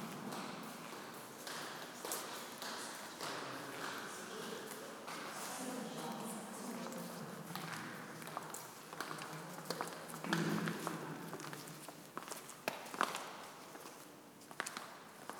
basilica, Novigrad, Croatia - walk inside
19 July, 11:44